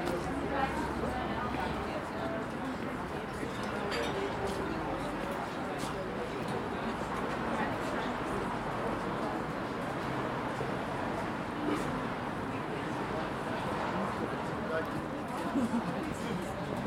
Naschmarkt Stand, Linke Wienzeile, Wien, Österreich - along the cafe & restaurant side
naschmarkt: walk along the cafes & restaurants side, people sitting outside in the spring sun
9 February, 15:11